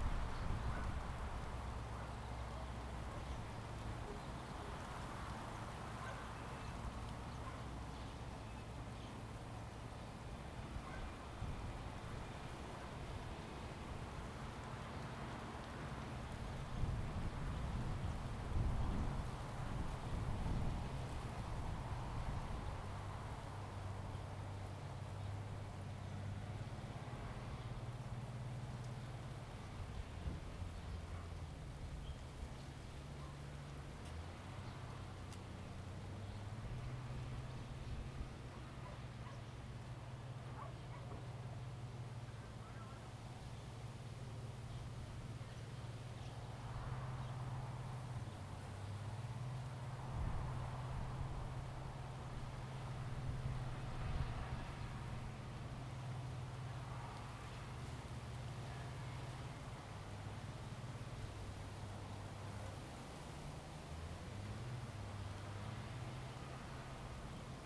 農塘生態公園, Fanshucuo - wind
Small village, wind, Casuarina trees, birds sound, dog sound
Zoom H2n MS +XY
Shuilin Township, 雲151鄉道